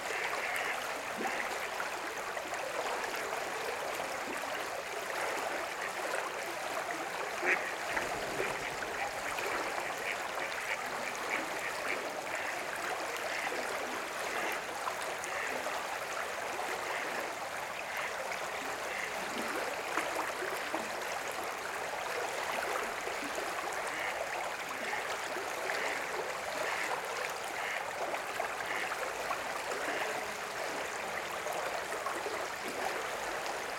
{"title": "Lindenpl., Bad Berka, Deutschland - Between Soundmarks and Landmarks", "date": "2020-04-25 23:06:00", "description": "*Recording technique: ORTF\n*The Soundscape: Remarkable about this recording is the night aquatic life on the Ilm river in Bad Berka. There is a few geese population. A car can be heard slowly driving past and the voices and tones of the river can be felt in distinctive stereofields.\nThe Ilm is a 128.7 kilometers (80.0 mi) long river in Thuringia, Germany. It is a left tributary of the Saale, into which it flows in Großheringen near Bad Kösen.\nTowns along the Ilm are Ilmenau, Stadtilm, Kranichfeld, Bad Berka, Weimar, Apolda and Bad Sulza.\nIn the valley of Ilm river runs the federal motorway 87 from Ilmenau to Leipzig and two railways: the Thuringian Railway between Großheringen and Weimar and the Weimar–Kranichfeld railway. Part of the Nuremberg–Erfurt high-speed railway also runs through the upper part of the valley near Ilmenau.\n*Recording and monitoring gear: Zoom F4 Field Recorder, RODE M5 MP, Beyerdynamic DT 770 PRO/ DT 1990 PRO.", "latitude": "50.90", "longitude": "11.29", "altitude": "272", "timezone": "Europe/Berlin"}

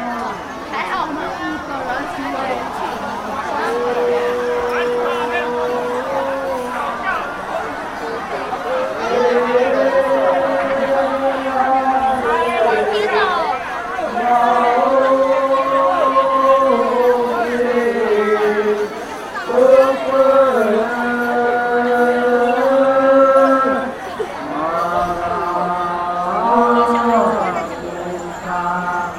{"title": "353台灣苗栗縣南庄鄉東河村 - 賽夏族矮靈祭-祭典合唱", "date": "2012-12-01 23:56:00", "description": "賽夏族矮靈祭合唱，H4n", "latitude": "24.58", "longitude": "121.03", "altitude": "781", "timezone": "Asia/Taipei"}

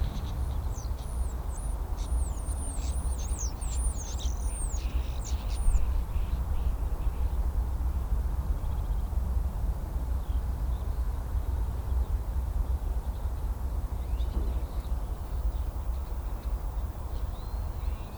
{"title": "Haidfeld, Vienna City Limits - Haidfeld (schuettelgrat, excerpt)", "date": "2004-03-20 17:28:00", "description": "Fieldrecording, Dusk, Transition", "latitude": "48.13", "longitude": "16.34", "altitude": "196", "timezone": "Europe/Vienna"}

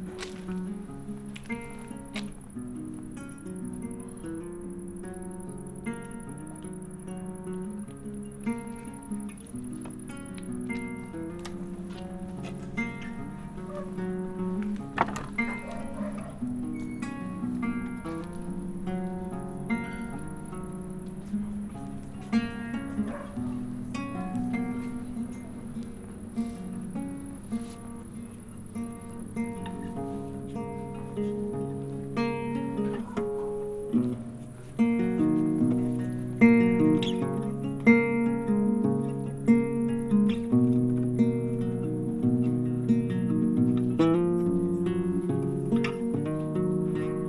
{"title": "Maribor, Medvedova, Babica - gathering in the garden", "date": "2012-08-02 21:00:00", "description": "opening of a video installation by Natasha Berk at Babica. Frank is idling on the guitar.", "latitude": "46.57", "longitude": "15.63", "altitude": "277", "timezone": "Europe/Ljubljana"}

